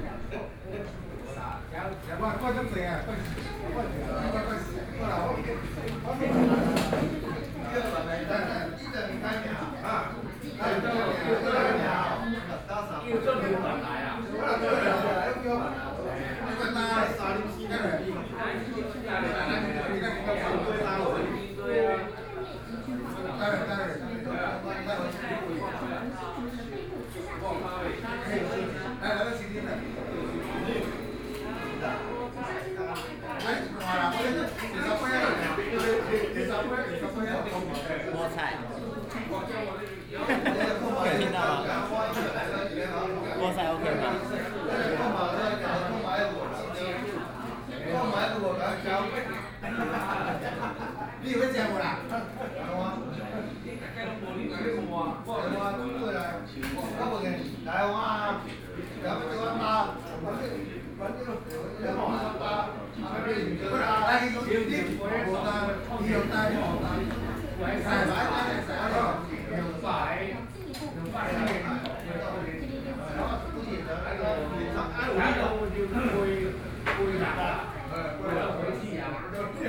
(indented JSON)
{
  "title": "Houli District, Taichung City - in the restaurant",
  "date": "2014-03-11 17:56:00",
  "description": "in the restaurant\nBinaural recordings",
  "latitude": "24.31",
  "longitude": "120.73",
  "timezone": "Asia/Taipei"
}